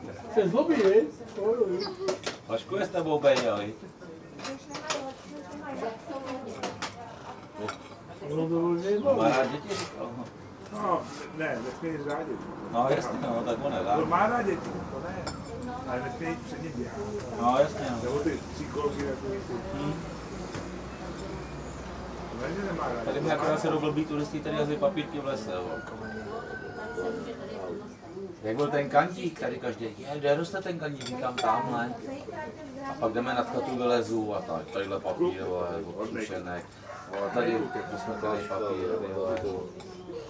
Hradištko, Czech Republic - u kukulinka
Střední Čechy, Česko, European Union, 17 May 2013